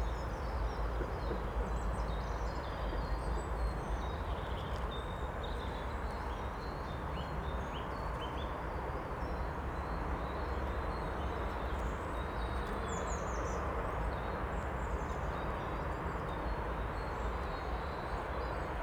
Braník woodland above the station, Nad Údolím, Praha, Czechia - Braník woodland above the station
This track sound very noisy, but it is quite representative of what is heard here. All the roads, railways and tram lines running along the valley beside the river Vltava create the constant rushing aural background to the whole area where ever you are. This spot is in woodland, but just above the multiple transport systems. On this recording a nuthatch calls, trams squeal and the almost lost loudspeakers announce an incoming train to Braník station. It is windy. At the end a single train engine passes very close on the upper railtrack.
Praha, Česko, 6 April 2022